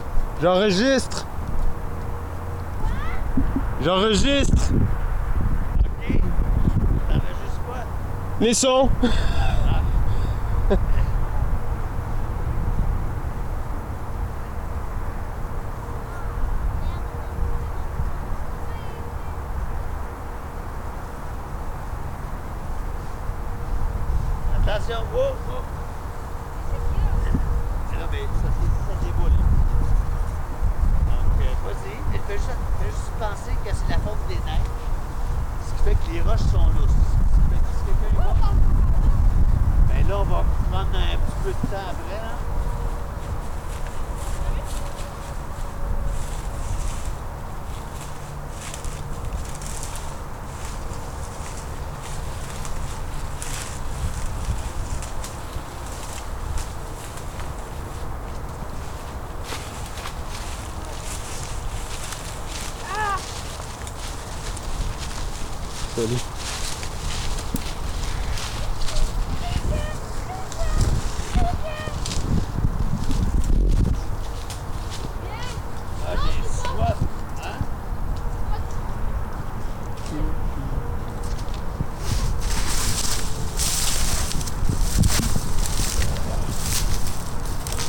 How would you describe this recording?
equipment used: Korg Mr 1000, The Falaise is a patch of green hill that runs along the boundary of NDG. It has been played on for years by residents. Once construction of the remodeled Turcot Int. is complete, access to this green space will be severly or totally comprimised. As it happens, I was able to record a father with his two kids scalling the hill, if you listen closely you can hear the man say that he used to play there 30 years ago.